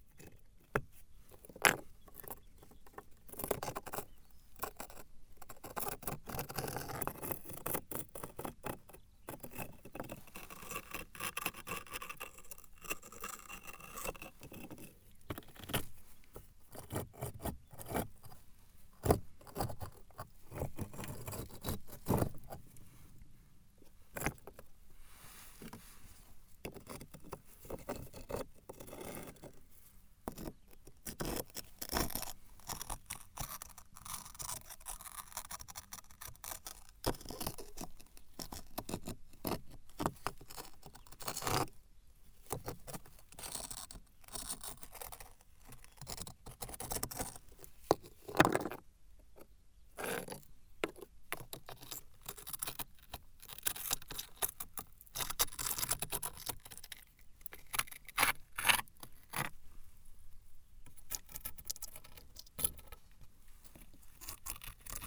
The Lozere mounts. This desertic area is made of granite stones. It's completely different from surroundings. Here, I'm playing with the stones, in aim to show what is different with it. Especially, it screechs.
28 April 2016, 12:00